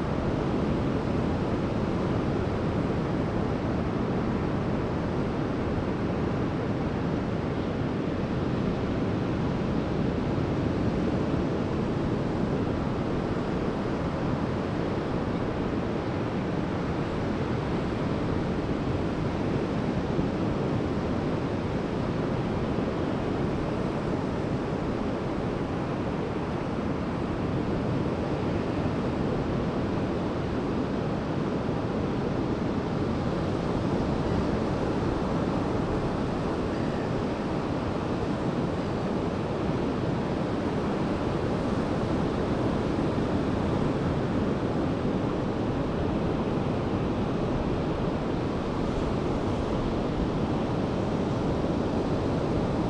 Oosterend Terschelling, Nederland - Netherlands, Terschelling, beach and wind sound
Quiet recording on the island Terschelling in the north of the Netherlands. Stereo recording with primo mics.